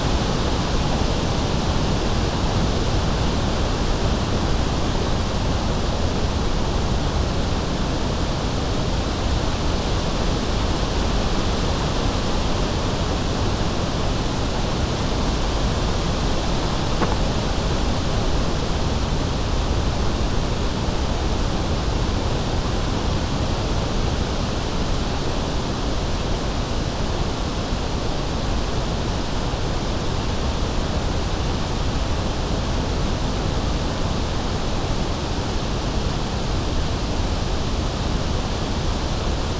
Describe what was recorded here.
Wind in the poplars in the Tout-Vent street, the leaves are noisy. The name means "all the winds".